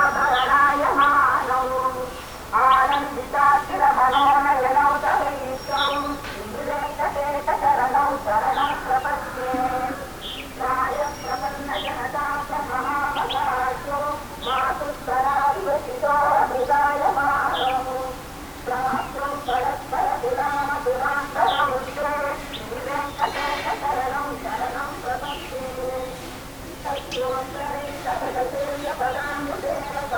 Munnar - Udumalpet Rd, Nullatanni, Munnar, Kerala 685612, India - Munnar - above the valley
Munnar - above the valley, early morning
Devikulam, Kerala, India, 22 January, 06:00